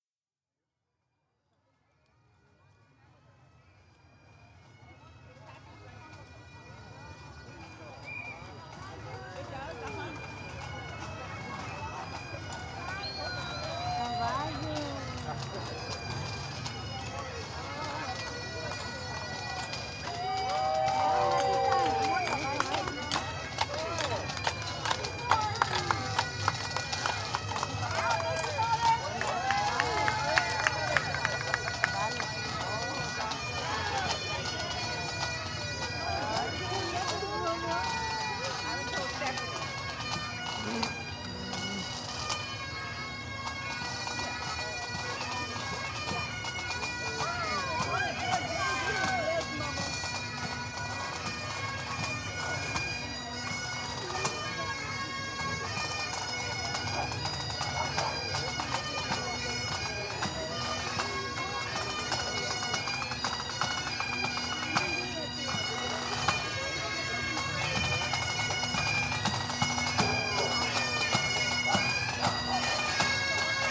Street Pipes, Greater Heights, Houston, TX, USA - Street Pipes (BCP&D)
Bayou City Pipes and Drums passing our shady spot during the Lindale Park Fourth of July Parade.
Sony PCM D50
Texas, United States of America